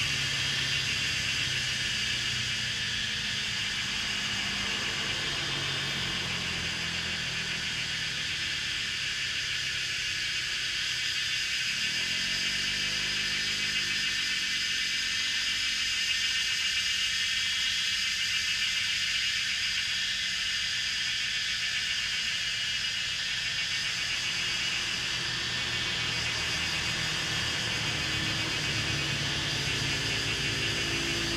National Chi Nan University, 桃米里 - Traffic and Cicadas Sound
Cicadas cry, Bird sounds, Traffic Sound
Zoom H2n MS+XY
Puli Township, Nantou County, Taiwan, 16 May 2016, ~17:00